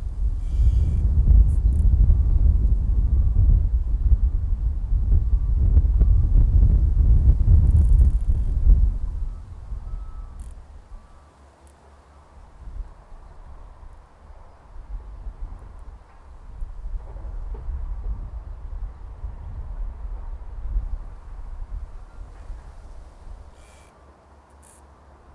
Monarch's Way, Winterbourne, UK - 059 Too much wind, birds, creaking tree, distant construction
Salisbury, UK, 28 February 2017